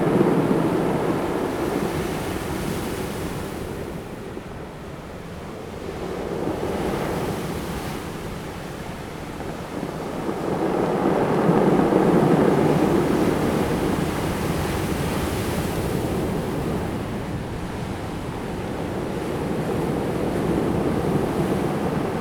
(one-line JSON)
{"title": "達仁溪橋, Nantian, Daren Township - the waves", "date": "2018-03-23 11:18:00", "description": "Sound of the waves, Rolling stones\nZoom H2n MS +XY", "latitude": "22.26", "longitude": "120.89", "altitude": "5", "timezone": "Asia/Taipei"}